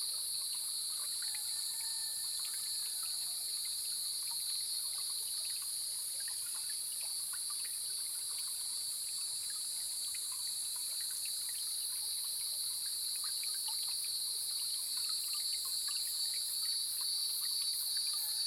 種瓜坑溪, 成功里 Puli Township - Cicadas and Stream
Cicadas cry, Sound of water
Zoom H2n Spatial audio